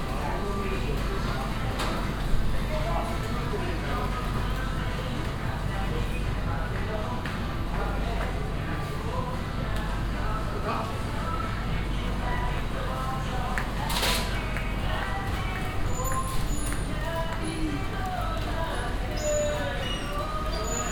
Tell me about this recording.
a supermarket specialised on seafood - a salesman offering several kinds of fresh fish and seaweed, international city scapes and social ambiences